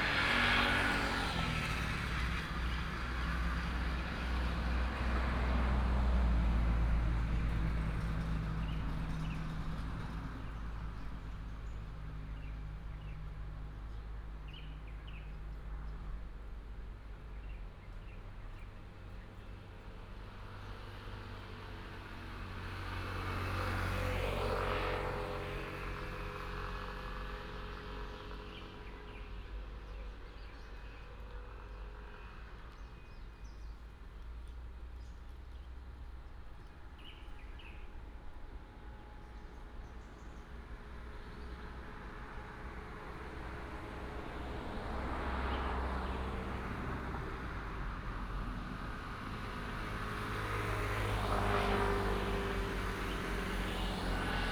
Lantan Lake, 嘉義市東區蘭潭里 - Next to the reservoir
Next to the reservoir, Traffic sound, Bird sound, Helicopter